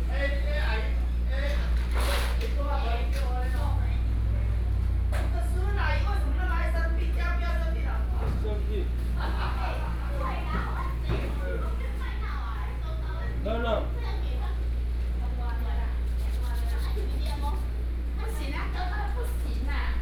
中福里, Guanshan Township - Vegetable wholesale shop
in the Vegetable wholesale shop, small Town